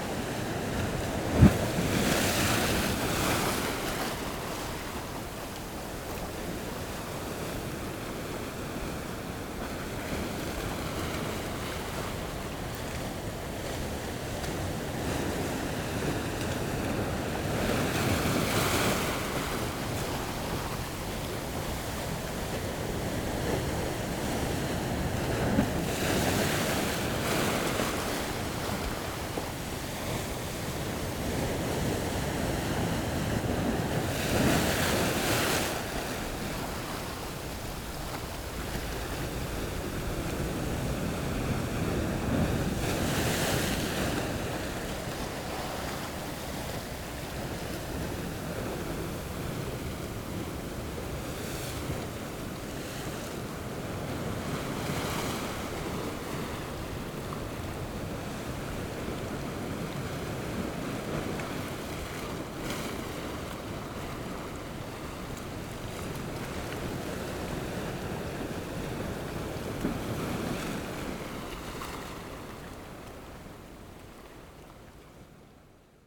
三貂角, New Taipei City - sound of the waves
Coastal, Sound of the waves
Zoom H6 MS mic+ Rode NT4